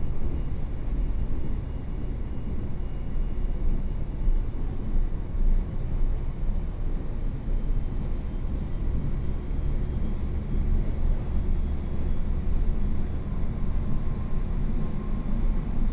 Hsinchu City, Taiwan - Local Train
from Zhubei Station to Hsinchu Station, on the train, Sony Pcm d50, Binaural recordings
Dong District, Hsinchu City, Taiwan, 24 September 2013